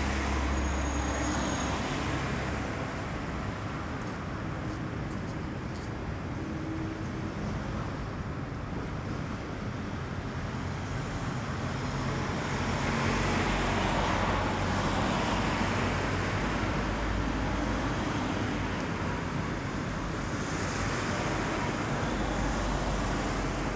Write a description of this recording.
Lot of cars, busses. Tech Note : Ambeo Smart Headset binaural → iPhone, listen with headphones.